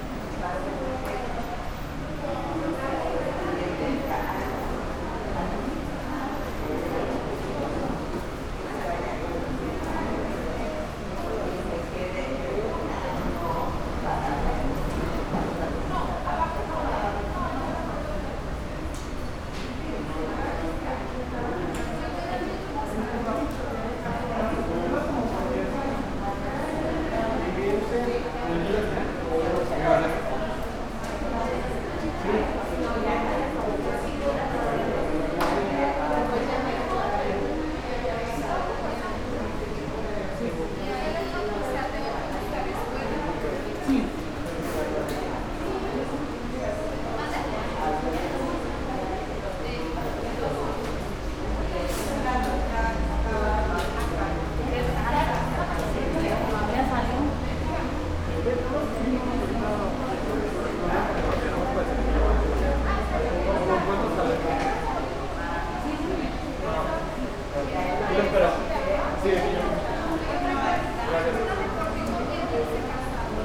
Calle Lunik #105 · 1er piso Consultorio No. 108 Torre II en Médica Campestre, Futurama Monterrey, León, Gto., Mexico - En la entrada del Hospital Médica Campestre.
At the entrance of the Hospital Medica Campestre.
I made this recording on september 3rd, 2022, at 12:13 p.m.
I used a Tascam DR-05X with its built-in microphones and a Tascam WS-11 windshield.
Original Recording:
Type: Stereo
Esta grabación la hice el 3 de septiembre 2022 a las 12:13 horas.